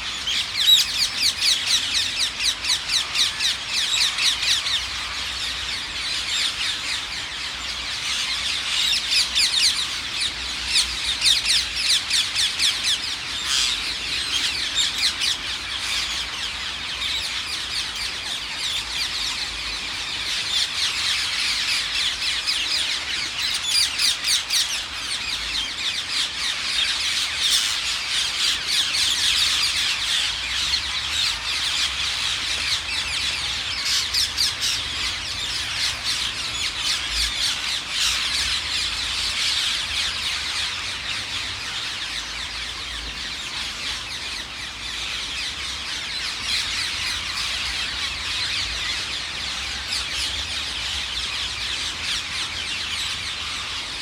Garden of Remembrance, London Borough of Lewisham, London, UK - Ring-necked Parakeet Roost unusually without overhead planes
The intense sound of the thousands strong parakeet roost is usually mixed with planes en route to Heathrow Airport. This recording is during a rare gap between the aircraft.
14 August 2013